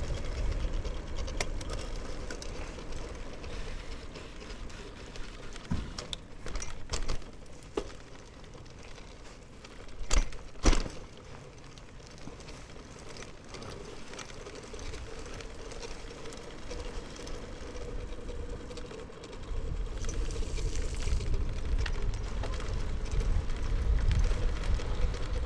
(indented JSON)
{
  "title": "Schwäbisch Gmünd, Germany - Bicycle ride on cobblestone",
  "date": "2014-05-12 16:45:00",
  "latitude": "48.79",
  "longitude": "9.80",
  "altitude": "336",
  "timezone": "Europe/Berlin"
}